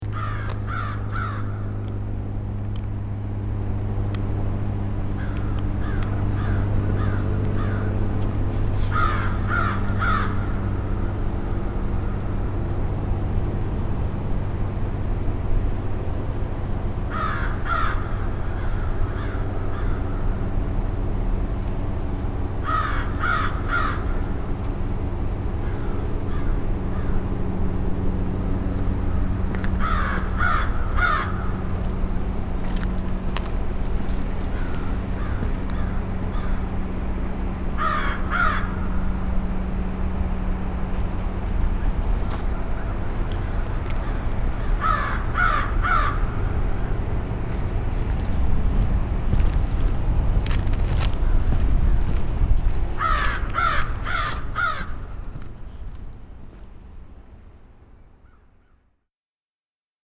Montreal: Concordia University, Loyola (crows) - Concordia University, Loyola (crows)
equipment used: Microtrack II
Squawk!
QC, Canada